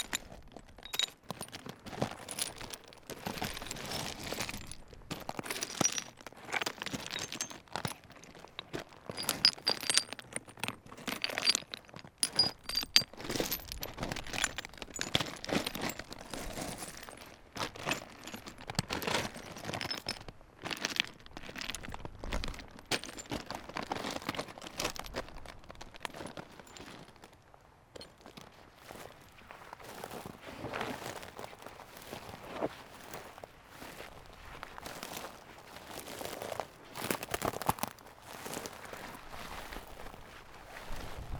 Montdardier, France - The causse stones
The stones in this very desertic place are special. As I said the the Lozere mounts, where stones creechs, you won't find this elsewhere. In fact here in this huge limestone land, the stones sing. It makes a sound like a piano, with shrill sounds and acidulous music note. That's what I wanted to show with stones here and that's not very easy. These stones are called "lauzes".